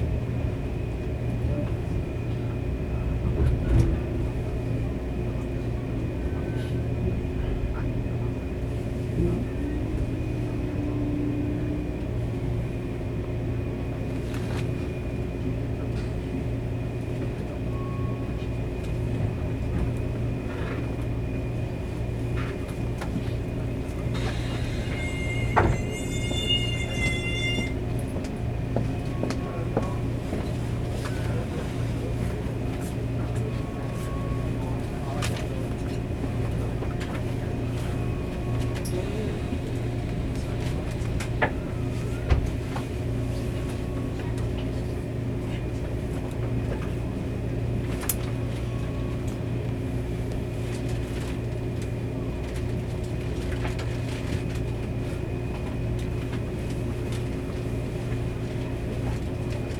Shanhua, Tainan - On the train
善化區 (Shanhua), 台南市 (Tainan City), 中華民國, 1 February 2012